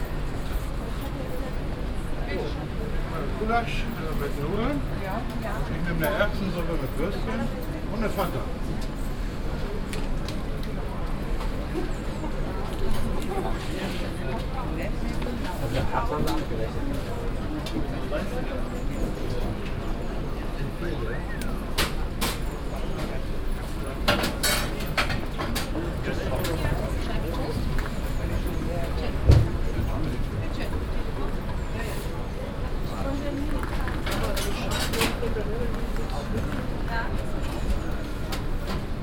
essen, flachsmarkt, gulaschkanone
Am Markt zum Mittag. Menschen bestellen Essen an einer Gulaschkanone. Einem Markwagen de lokalen Eintopf anbietet.
At the market at noon. People ordering food at the gulaschkanone - a market wagon that sells local stew.
Projekt - Stadtklang//: Hörorte - topographic field recordings and social ambiences